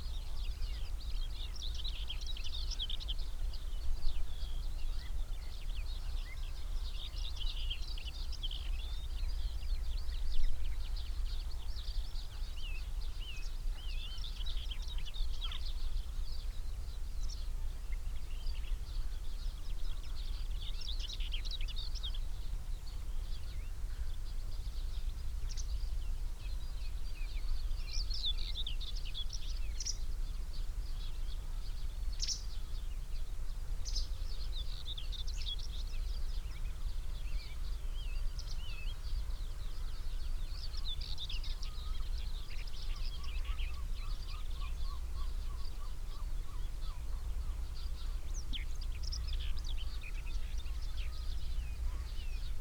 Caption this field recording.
Grasshopper warbler ... in gannet territory ... mics in a SASS ... bird calls ... song from ... blackcap ... whitethroat ... pied wagtail ... gannet ... kittiwake ... tree sparrow ... wren ... song thrush ... wood pigeon ... jackdaw ... some background noise ...